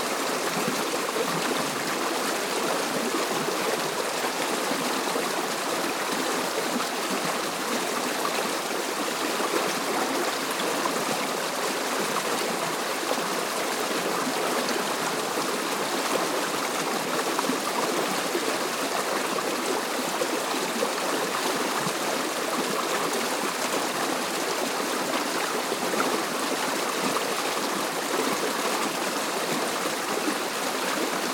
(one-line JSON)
{"title": "Townparks, Co. Tipperary, Ireland - Stream under Path", "date": "2014-03-08 14:20:00", "description": "Stream under path", "latitude": "52.37", "longitude": "-7.93", "timezone": "Europe/Dublin"}